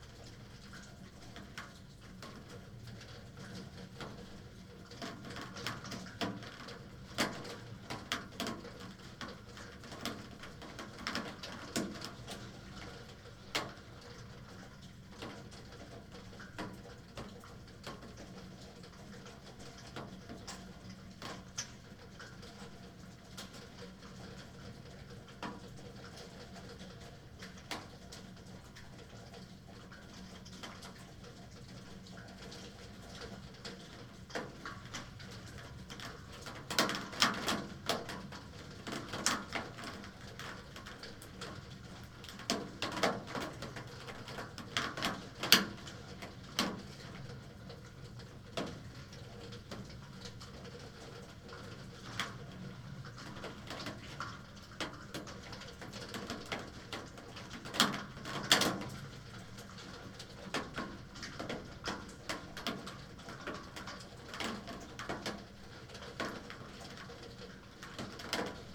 berlin, friedelstraße: backyard window - the city, the country & me: backyard window, snowmelt, water dropping on different window sills
snowmelt, water dropping on different window sills, recorder inside of a double window
the city, the country & me: february 3, 2010